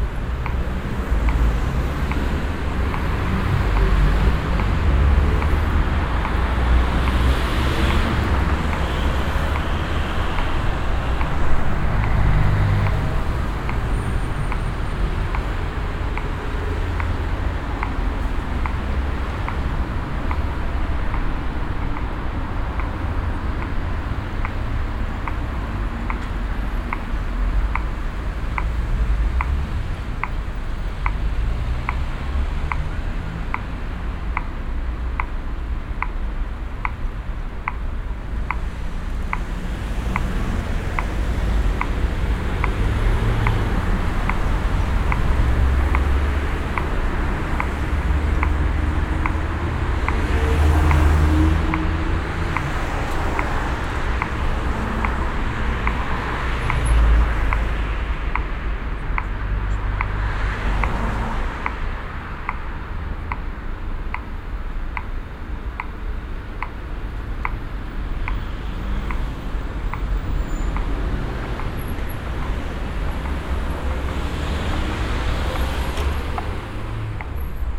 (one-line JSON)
{"title": "berlin, bülowstr, traffic signs", "date": "2009-05-25 10:35:00", "description": "soundmap d: social ambiences/ listen to the people - in & outdoor nearfield recordings", "latitude": "52.50", "longitude": "13.36", "altitude": "40", "timezone": "Europe/Berlin"}